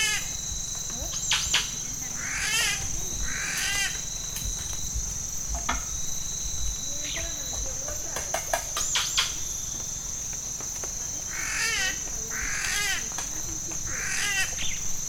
Parque Nacional Natural Amacayacu, Amazonas, Colombia - Pájaro Arrendajo
Este pájaro imita a otras aves, ranas y hasta machete afilando
20 August, ~18:00